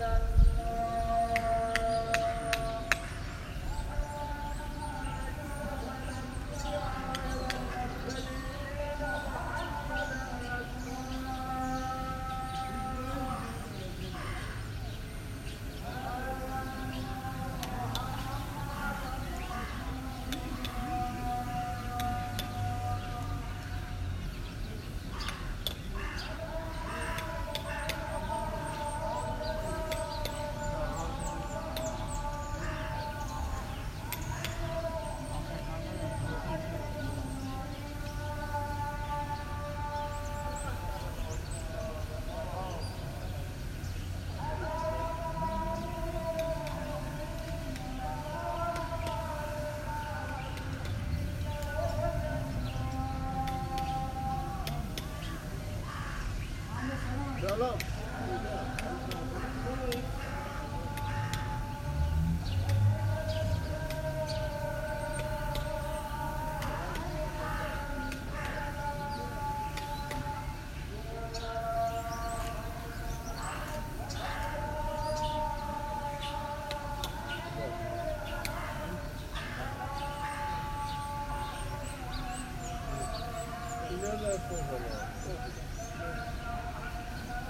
quiet atmosphere inside the Golestan Palace - in contrast to the vibrant surroundings